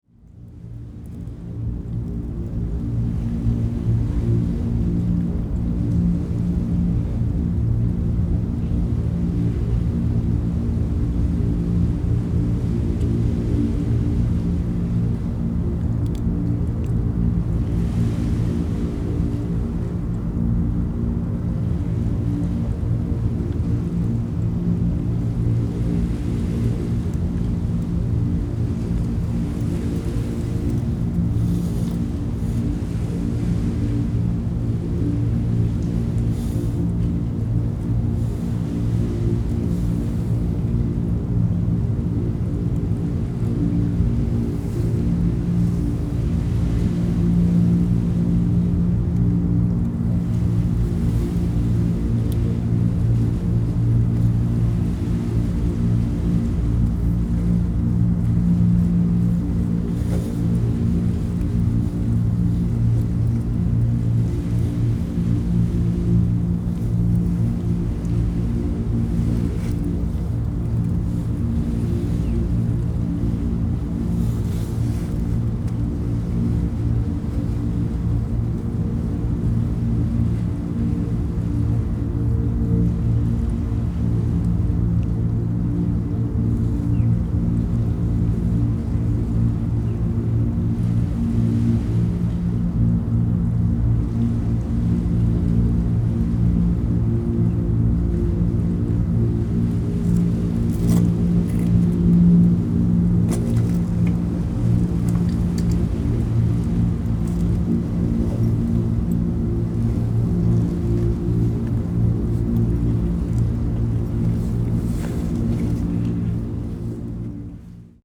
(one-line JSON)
{"title": "staten Island", "date": "2012-01-12 14:47:00", "description": "waves and ships engines, one mic in plastic pipe", "latitude": "40.61", "longitude": "-74.06", "altitude": "3", "timezone": "America/New_York"}